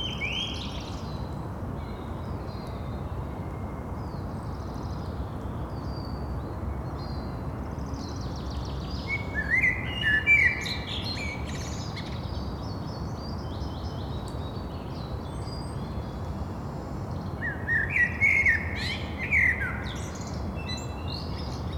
Pirita Forest Cemetary Tallinn, spring birds
recording from the Sonic Surveys of Tallinn workshop, May 2010
Tallinn, Estonia